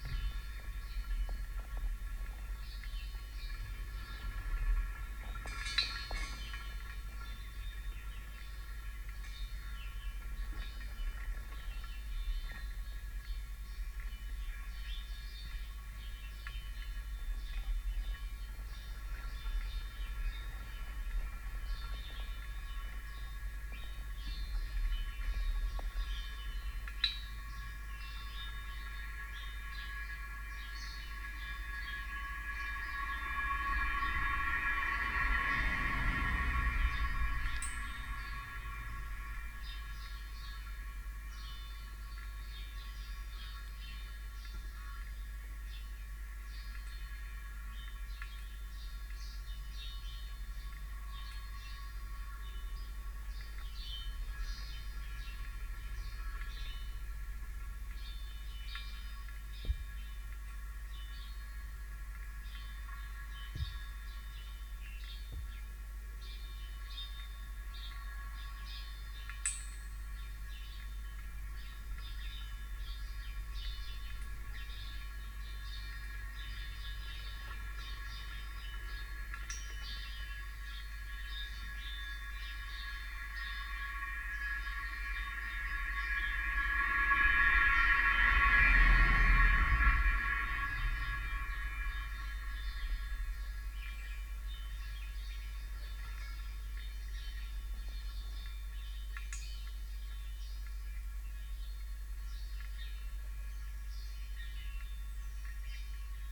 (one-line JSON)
{"title": "in the Forest Garden - rain collection tanks", "date": "2022-02-12 17:44:00", "description": "2 x 1500litre rain water harvesting tanks, one hydrophone in each, house sparrows, vehicles drive past on the lane.\nStereo pair Jez Riley French hydrophones + SoundDevicesMixPre3", "latitude": "52.29", "longitude": "1.16", "altitude": "55", "timezone": "Europe/London"}